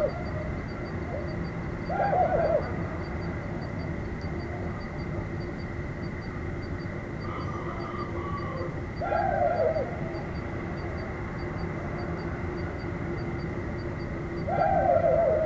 nuit à piton saint pendant le tournage de Signature, dHerve Hadmar
Reunion, 14 August